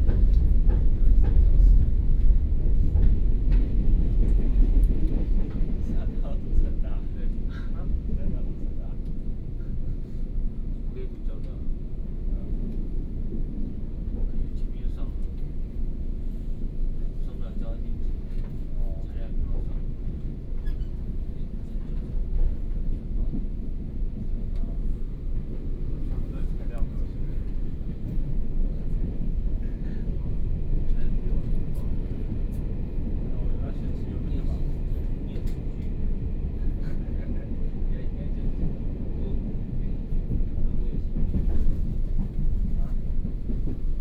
Hsinchu City, Taiwan - Train compartment
Train compartment, Compartment message broadcasting
East District, Hsinchu City, Taiwan